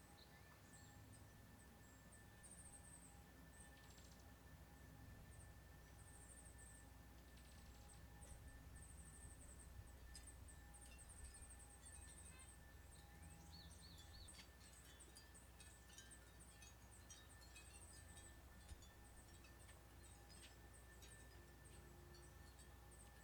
Flying Heritage Museum - Air Show
My house is about 2½ miles from Paine Field, where Paul Allen's Flying Heritage & Combat Armor Museum is headquartered. On weekends during the summer, we are frequently treated(?) to flyovers of some of his vintage WWII fighter planes; sometimes 3 or 4 of them together in formation. They fly circles over us, until they run out of gas and return to the field. They are loud.
Major elements:
* World War Two-era prop airplanes (I missed the little red jet earlier)
* Oystershell windchimes
* Distant leaf blowers
* Birds
* Delivery vans
Washington, United States of America